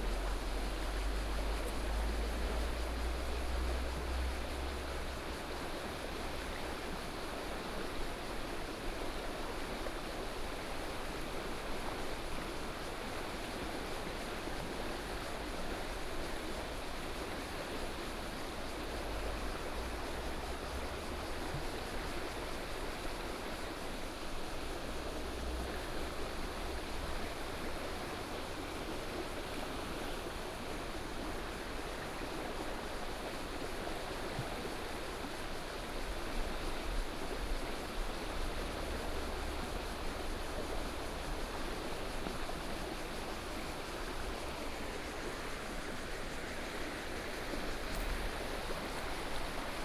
Guanshan Township, Taitung County - Walking along the stream
Walking along the stream, Cicadas sound, Traffic Sound, Small towns